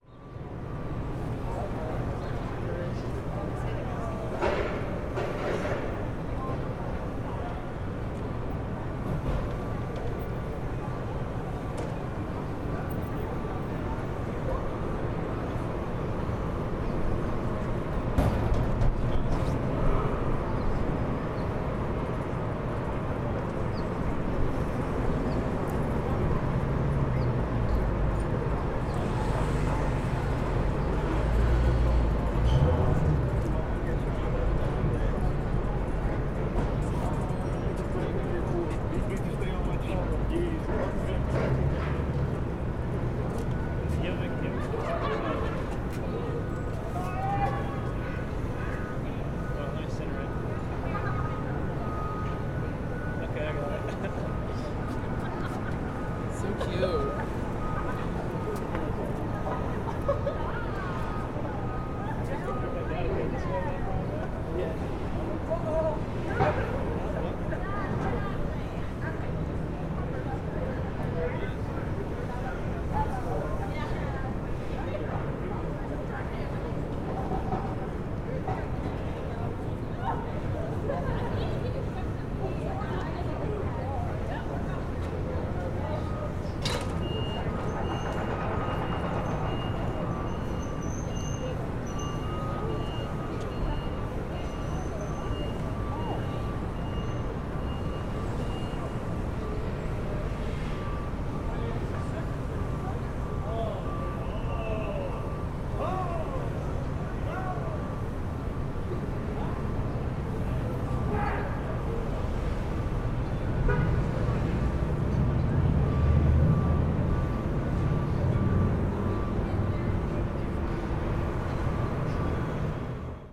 Recording at bronze statue of Bill Monroe outside Ryman Auditorium. Sounds of fans taking pictures with the statue and roadies unloading equipment for the band Khruangbin. Bill Monroe is the Father of Bluegrass music. Much to the chagrin of bluegrass purists his recording, "My Last Days on Earth, " contains a field recording of surf and seagulls.
14 March 2022, ~13:00